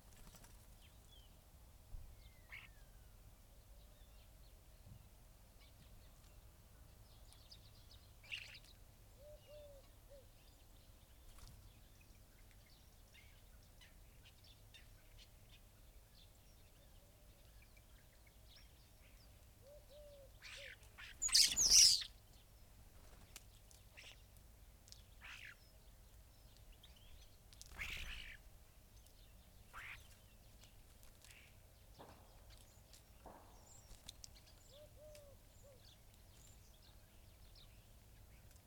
Luttons, UK - starlings on bird feeders ...
starlings on bird feeders ... open lavalier mic clipped to bush ... mono recording ... bird calls from ... greenfinch ... blackbird ... collared dove ... dunnock ... some background noise ...